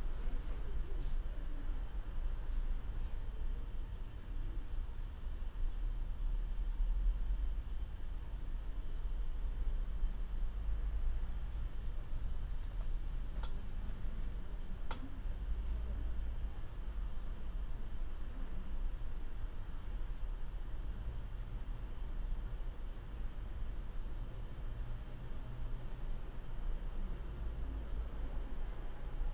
Bereichsbibliothek Architektur und Kunstwissenschaft @ TU Berlin - Enter Bib Architektur und Kunstwissenschaft
Deutschland, 9 March 2022